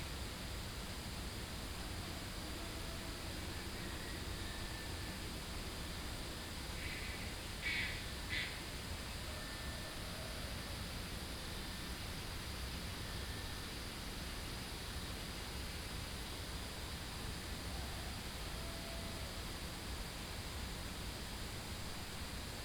桃米巷, 埔里鎮桃米里, Taiwan - In the morning
In the morning, Birds call, Chicken sounds, The sound of water streams